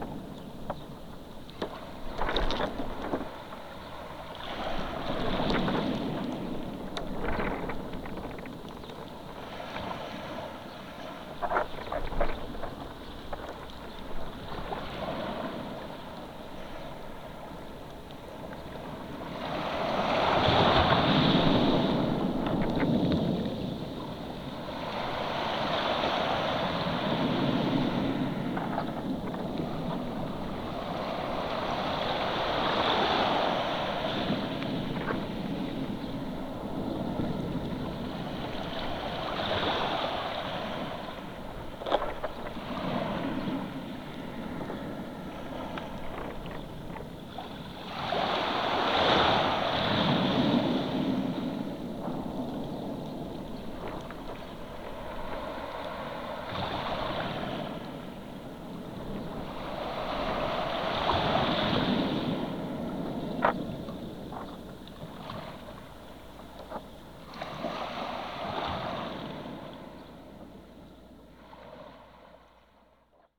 hydrophones placed in the sand of seachore